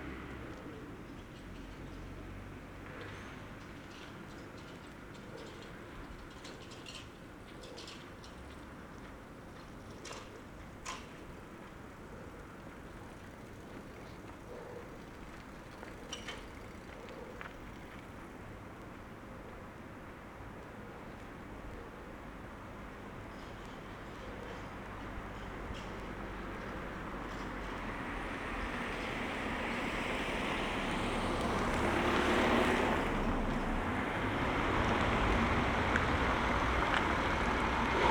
Berlin: Vermessungspunkt Friedelstraße / Maybachufer - Klangvermessung Kreuzkölln ::: 09.12.2012 ::: 05:35